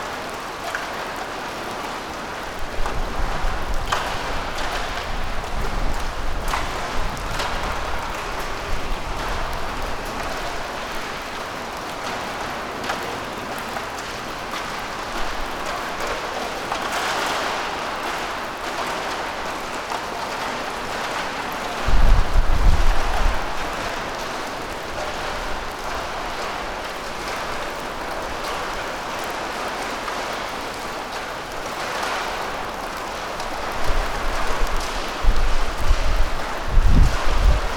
dropping ambience - abandoned, spacious hall in Trieste old port, roof full of huge holes, in- and outside rain and winds ...